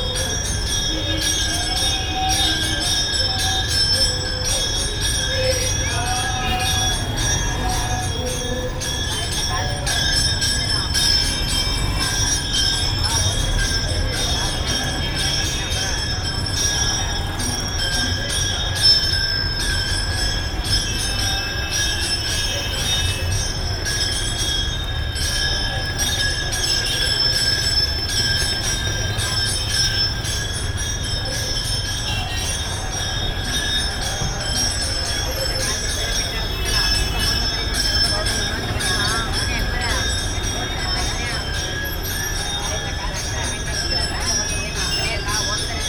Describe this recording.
India, Karnataka, Bangalore, street, temple